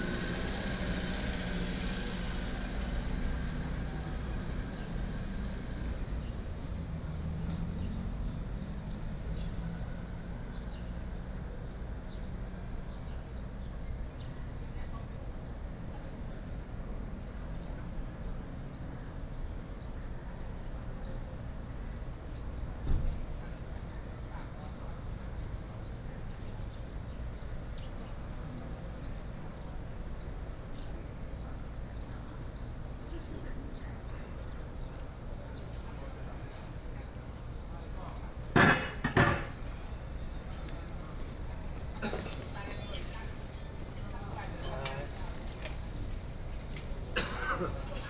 Jiaoxi Station, 礁溪鄉 - In the Square
Sitting in front of the station square, Very hot weather, Traffic Sound